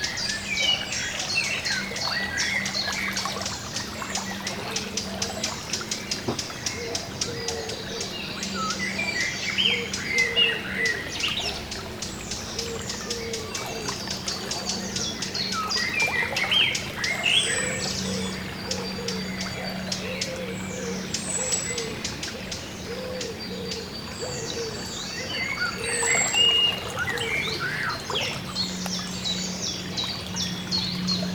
Muehlengrabenpfad, Fritzlar, Deutschland - FritzlarMuehlengraben01
recorded with Sony PCM-D100 with built-in mics
Hessen, Deutschland, May 2020